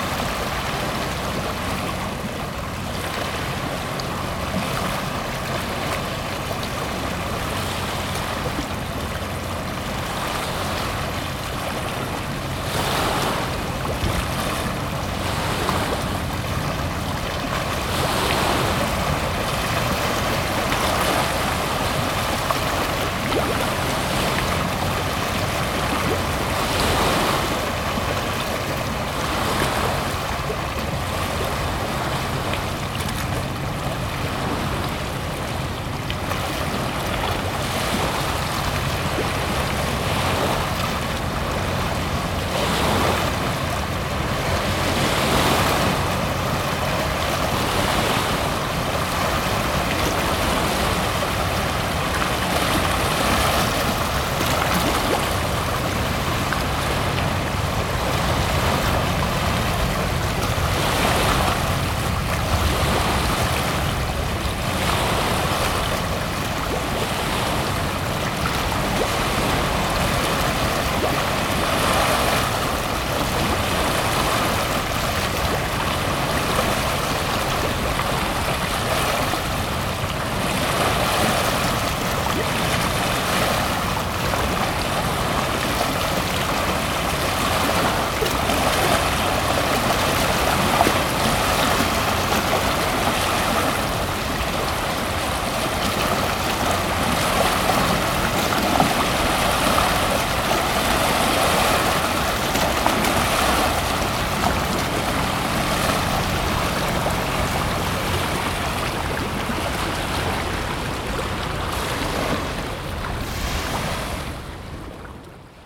Italia, Palermo [hatoriyumi] - Mare su Piattaforma
Mare su Piattaforma, suoni di gabbiani e barche